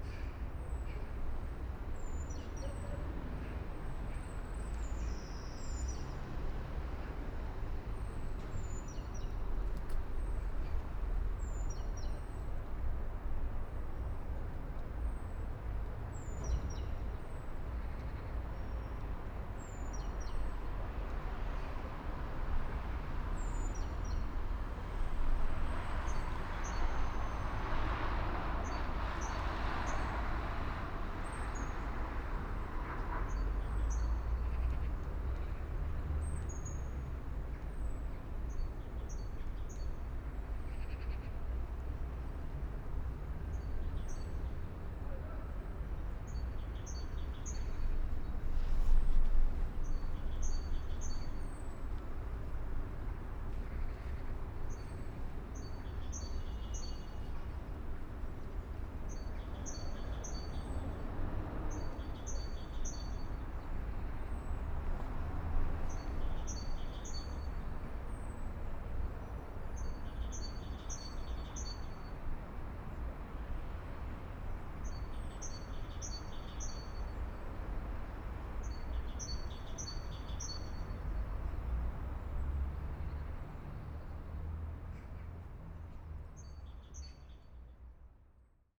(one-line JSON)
{"title": "Vestaweg, Binckhorst, Den Haag The Netherlands - Vestaweg", "date": "2012-02-28 12:11:00", "description": "Housing area between busy Binckhorstlaan and cemetery. Voice, birds. Soundfield Mic (Blumlein decode from Bformat) Binckhorst Mapping Project", "latitude": "52.07", "longitude": "4.34", "altitude": "2", "timezone": "Europe/Amsterdam"}